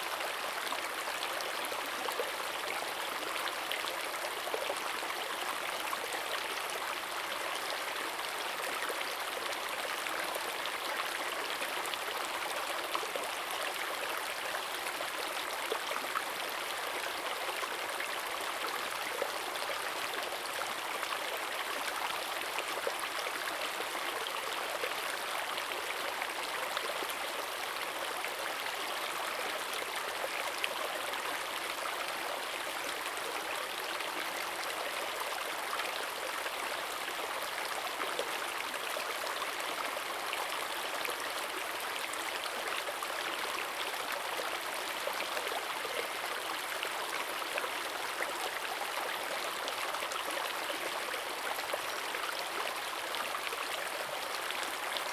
種瓜坑溪, 埔里鎮成功里 - Stream sound
Brook, Stream sound
Zoom H2n MS+XY
Nantou County, Taiwan